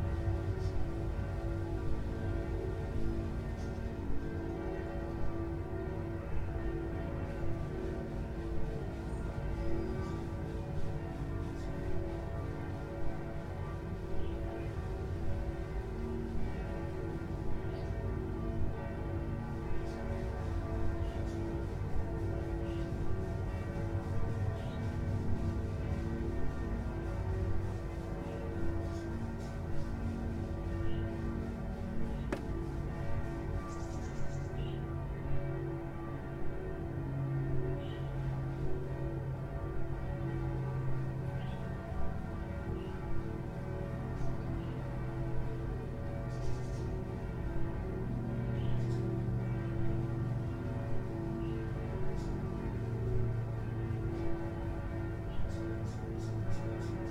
Pfungststrasse, Frankfurt, Germany - Sunday morning bells
distant bells and birds.